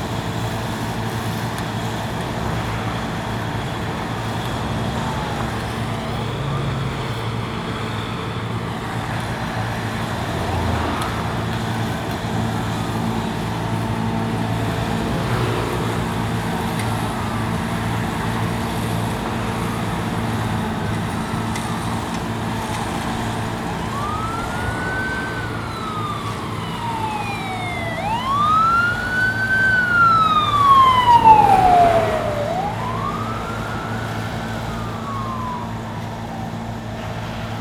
{
  "title": "Huandao N. Rd., Jincheng Township - Sorghum harvest machinery vehicles",
  "date": "2014-11-03 09:45:00",
  "description": "Next to farmland, Dogs barking, Sorghum harvest machinery vehicles, Traffic Sound\nZoom H2n MS+XY",
  "latitude": "24.45",
  "longitude": "118.34",
  "altitude": "31",
  "timezone": "Asia/Taipei"
}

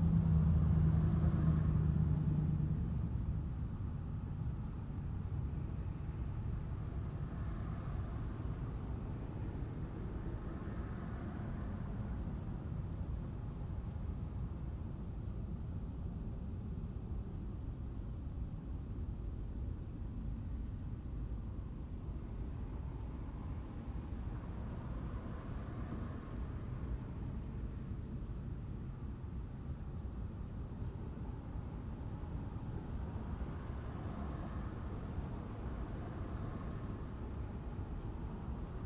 {
  "title": "Portage Park, Chicago, IL, USA - Early morning soundscape in Jefferson Park, Chicago",
  "date": "2012-07-18 06:25:00",
  "description": "Early morning soundscape in the Jefferson Park neighborhood, Chicago, Illinois, USA, recorded on World Listening Day 2012.\n2 x Audio Technica AT3031, Sound Devices 302, Tascam DR-40.",
  "latitude": "41.97",
  "longitude": "-87.77",
  "altitude": "188",
  "timezone": "America/Chicago"
}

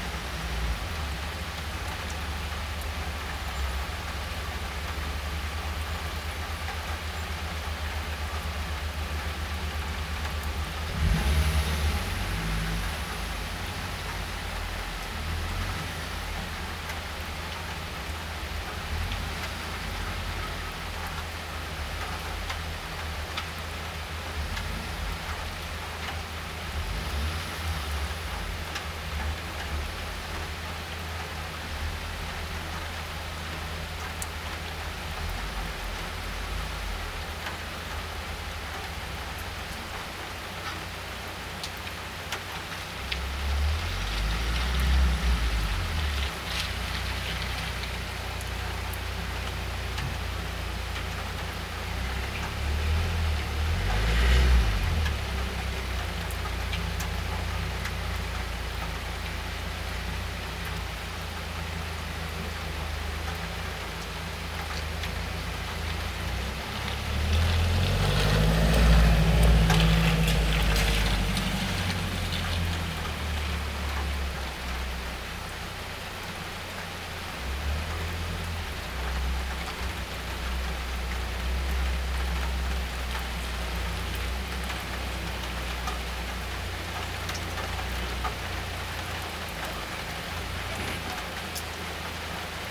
{
  "title": "Solesmeser Str., Bad Berka, Germany - Rain in the Neighborhood - Binaural",
  "date": "2021-02-06 10:04:00",
  "description": "Binaural recording with Soundman OKM and Zoom F4 Field Recorder. Best experienced with headphones.\nBaseline rain drops far and near increasing in tempo from the 6th minute. Occasional vehicular engines. A dog barks in the soundscape.",
  "latitude": "50.90",
  "longitude": "11.29",
  "altitude": "295",
  "timezone": "Europe/Berlin"
}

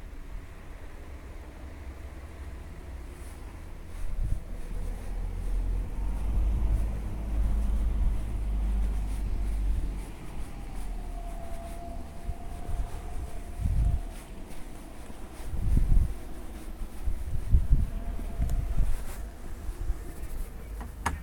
{
  "title": "Jakobuskirche, Pelkum, Hamm, Germany - The evening bells...",
  "date": "2015-03-01 16:55:00",
  "description": "… the bells are ringing for an evening prayer in lent… I listen into the passing resonances… it takes more than three minutes for the bells to sound out… the changing sounds and melodies are amazing… then I go into the old prayer room, one of the oldest in the city…\n…die Glocken rufen zu einem Abendgebet in der Fastenzeit… ich höre zu bis sie ganz verklungen sind… mehr als drei Minuten dauert es für die Glocken, zum Stillstand zu kommen… das Ausklingen erzeugt ganz erstaunliche Klänge und Melodien… dann geh ich in den alten Betraum, einen der ältesten der Stadt…",
  "latitude": "51.64",
  "longitude": "7.74",
  "altitude": "64",
  "timezone": "Europe/Berlin"
}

